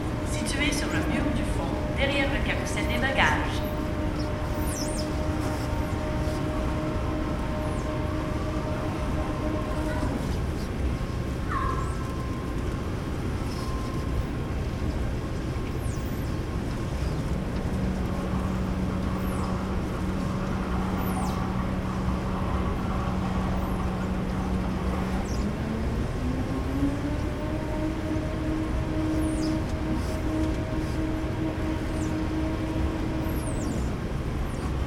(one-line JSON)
{"title": "Calgary International Airport, Calgary, AB, Canada - Baggage Carousel", "date": "2015-12-06 18:40:00", "description": "Black squeaky rubber against stainless steel. Baggage claim carousel #4 with no baggage on it. Zoom H4n Recorder", "latitude": "51.13", "longitude": "-114.01", "altitude": "1094", "timezone": "America/Edmonton"}